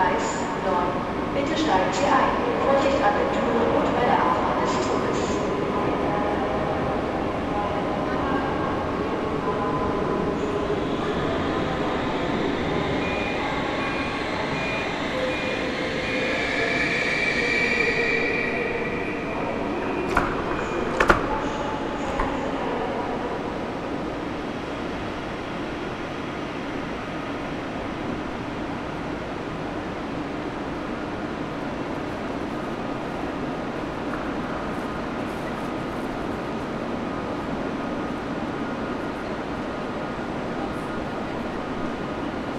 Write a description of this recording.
The recording starts with a walk through a tunnel that connects the platforms. A man whistles to signal that I should move aside for the proper physical distance. He stresses his whistle with a gesture. On platform 9 a train is leaving. Nearly noone boarded. A train to Kiel is announced that I took since November several times at that day. I never made a recording. But the train was always packed. A lot of people were leaving, even more boarding. Today I saw perhaps ten people leaving the train, 15 people boarding, all rather young. The doors of the train are beeping as if this could help to get customers. An anouncement is made that people should take a certain distance to each other. The train to Kiel is leaving with a short delay. The sound of the engine is quite different from older ICEs. A walk through the main hall to a book shop marks the end of this recording.